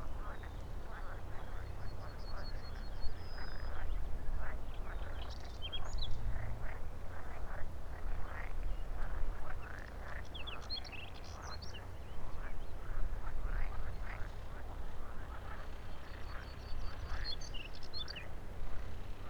Siaudiniai, Lithuania, tractor and landscape
5 May 2012, 16:30